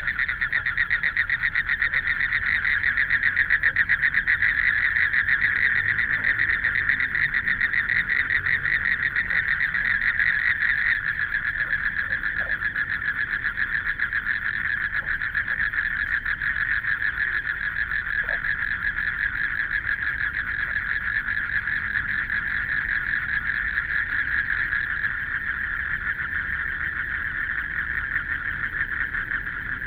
BiHu Park, Taipei City - Frogs sound
In the park, At the lake, Frogs sound, Traffic Sound
Binaural recordings
Neihu District, Taipei City, Taiwan